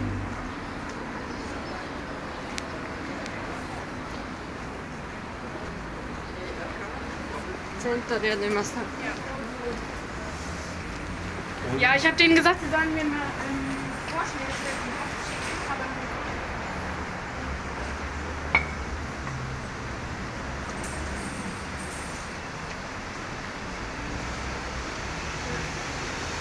Schönleinstraße, Berlin, Deutschland - Soundwalk Schönleinstrasse
Soundwalk: Along Schönleinstrasse until Urbanstrasse
Friday afternoon, sunny (0° - 3° degree)
Entlang der Schönleinstrasse bis Urbanstrasse
Freitag Nachmittag, sonnig (0° - 3° Grad)
Recorder / Aufnahmegerät: Zoom H2n
Mikrophones: Soundman OKM II Klassik solo
Berlin, Germany